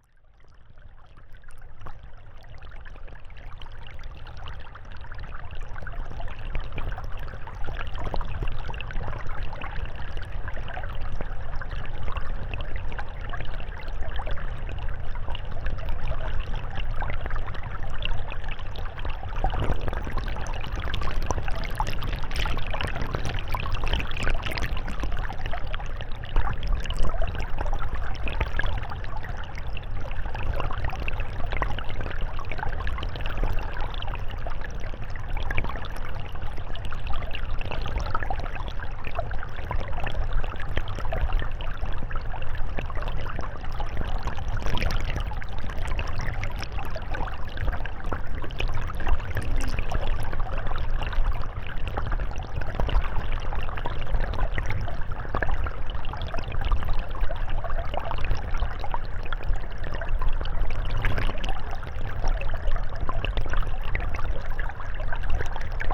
Mont-Saint-Guibert, Belgique - The river Orne
Recording of the river Orne, in a pastoral scenery.
Recorded underwater with a DIY hydrophone.
Mont-Saint-Guibert, Belgium, 10 April 2016, 14:40